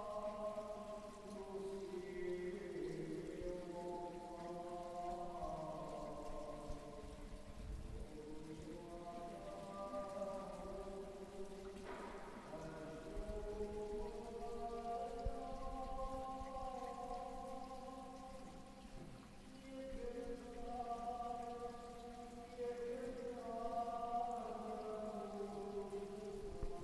Lisboa, Nossa Senhora sa Encarnação
Portugal, European Union